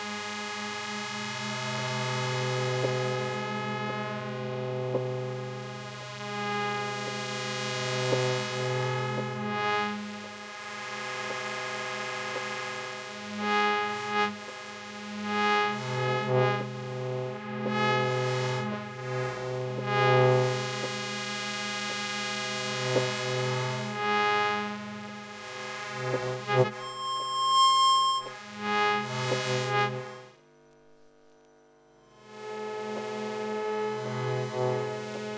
Passage Pierre-Adrien Paris, Besançon, France - DAB
micro Elektrosluch 3+
Festival Bien urbain
Jérome Fino & Somaticae